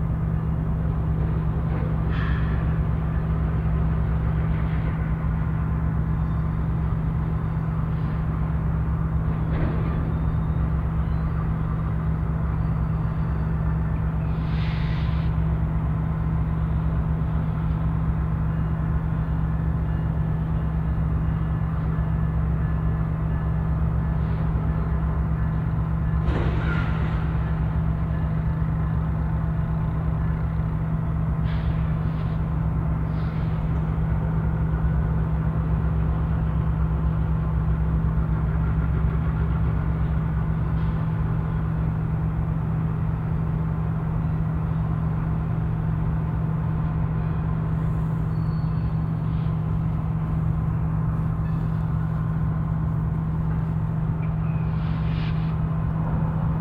Alyth - Bonnybrook - Manchester, Calgary, AB, Canada - Train tracks 2